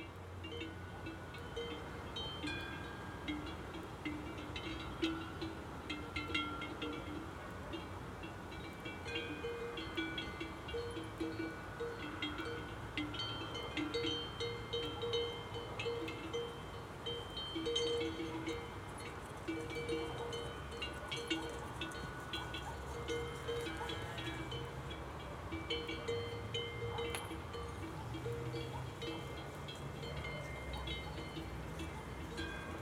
Harenda, Zakopane, Polska - A herd of cows with bells - binaural
A herd of cows with bells and fodder dogs
behind the back, the noise of the road 47
binaural recordins, Olympus LS-100 plus binaural microphones Roland CS-10EM
Suavas Lewy
Zakopane, Poland